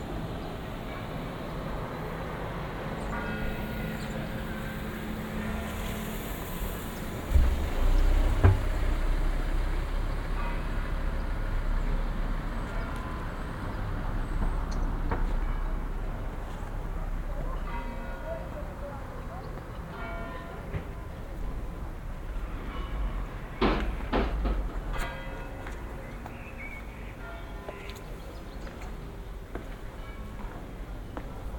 Carrer Major, Altea, Spain - (33) Multiple bells
Binaural recording of multiple bells.
recorded with Soundman OKM + ZoomH2n
sound posted by Katarzyna Trzeciak
Comunitat Valenciana, España